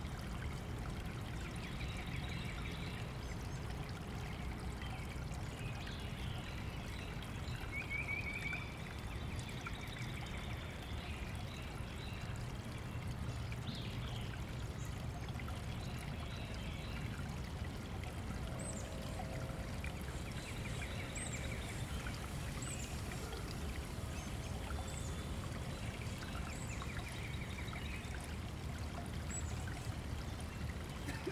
Recording from stream in Compton Gardens and Arboretum
Arkansas, United States, 22 April 2022, 07:49